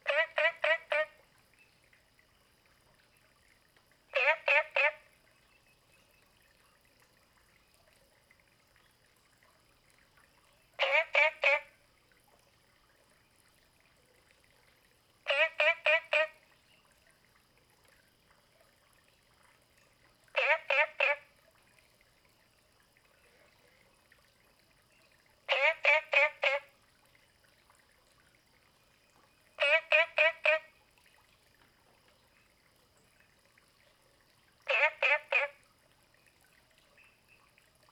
Frogs chirping, at the Hostel
Zoom H2n MS+XY